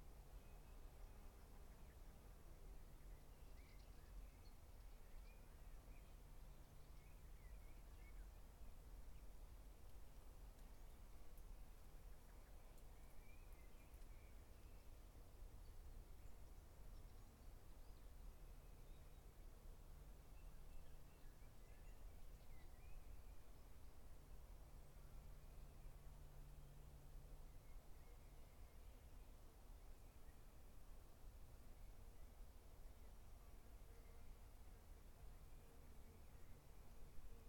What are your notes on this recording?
Lazy spring noon time at a vineyard hidden between the mountains - its sunny and hot, wind comes and goes, some birds and insects are active. it seems like the world was resting for a moment. Recorded with Roland R-05.